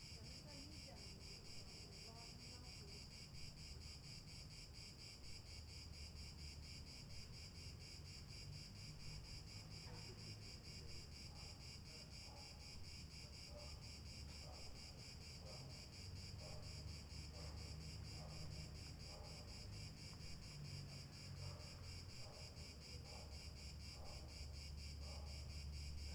Athens, Greece - Strefi Hill
I sat on a wall lining one of the paths to the top of Strefi Hill and pressed record. The sound of people talking, a flute?, dogs barking, but most prominently an unusual sounding bird.
Athina, Greece